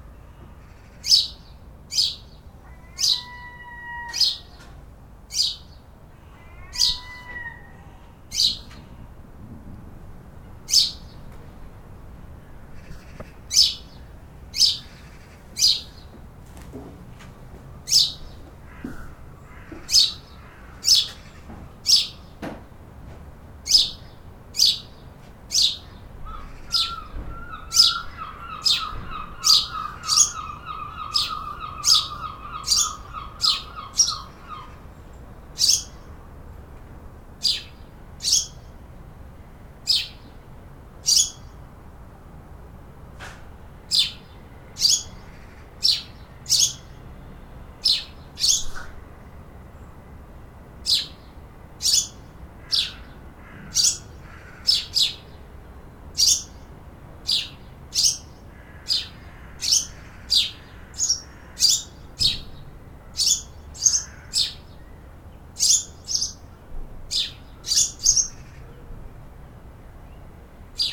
Falmouth, Cornwall, UK - Birds in my garden
Gulls young and old in my back garden, slightly processed
Zoom H6n XY mic
12 January 2014, ~15:00